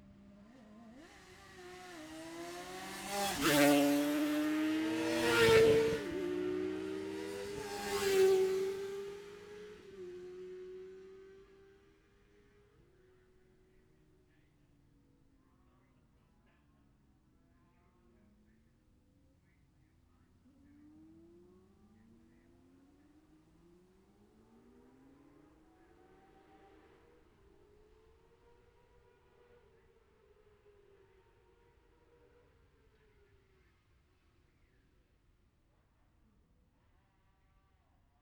Jacksons Ln, Scarborough, UK - Gold Cup 2020 ...
Gold Cup 2020 ... 2 & 4 strokes Qualifying ... dpas bag MixPre3 ... Monument Out ...